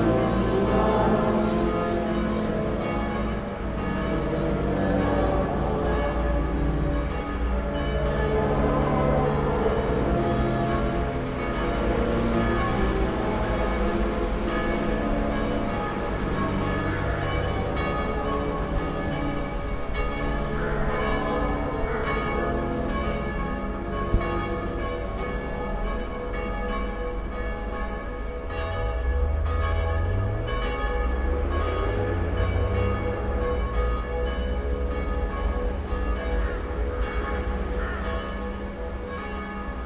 funeral inside of the church and sound of the winter landscape around.